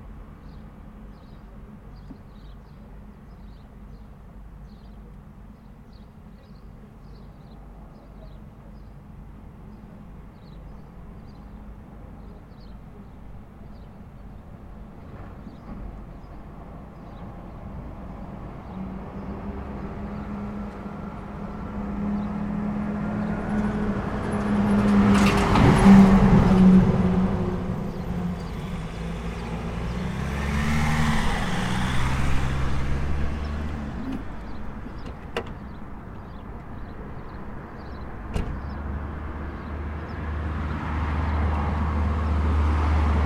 {"title": "Rte d'Aix les Bains, Cusy, France - Centre Cusy", "date": "2022-08-02 11:45:00", "description": "La circulation dans Cusy zone 30km/h, voitures thermiques, hybride, camion, motos, vélo, vélo électrique, toujours quelques moineaux pour piailler.", "latitude": "45.76", "longitude": "6.03", "altitude": "551", "timezone": "Europe/Paris"}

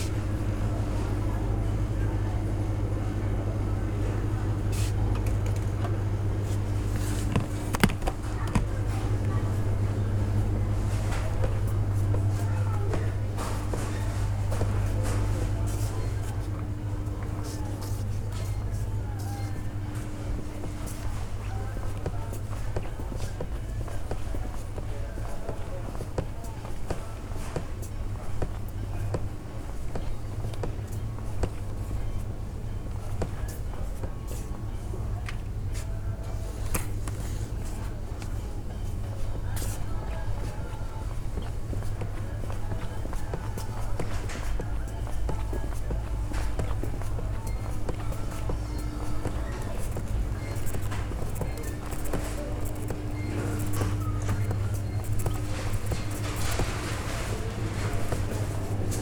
{"title": "Sollefteå, Sverige - Shopping food", "date": "2012-07-18 19:50:00", "description": "On the World Listening Day of 2012 - 18th july 2012. From a soundwalk in Sollefteå, Sweden. Shopping food at Coop Konsum shop in Sollefteå. WLD", "latitude": "63.17", "longitude": "17.28", "altitude": "24", "timezone": "Europe/Stockholm"}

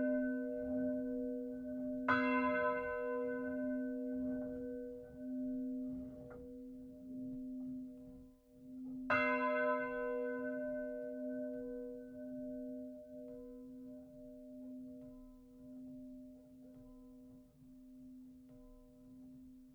{"title": "Rue de l'Église, Fontaine-Simon, France - Fontaine Simon - Église Notre Dame", "date": "2019-11-14 10:00:00", "description": "Fontaine Simon (Eure et Loir)\nÉglise Notre Dame\nVolée manuelle", "latitude": "48.50", "longitude": "1.02", "altitude": "196", "timezone": "Europe/Paris"}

